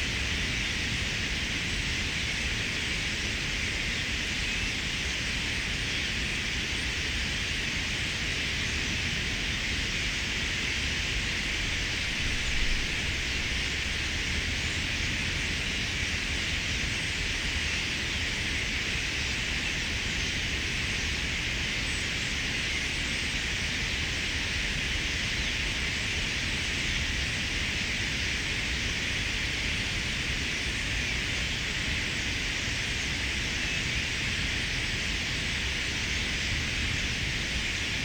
Ham Wall Nature Reserve
600,000 Starlings taking off after sunrise
20 November, 07:12